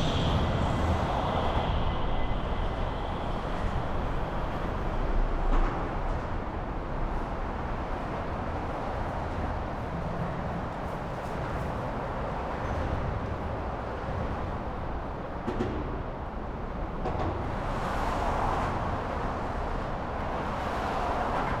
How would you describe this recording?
swooshes and rumble of cars, truck and trains passing on a flyover. recorded under the structure. seems like it's fast forward.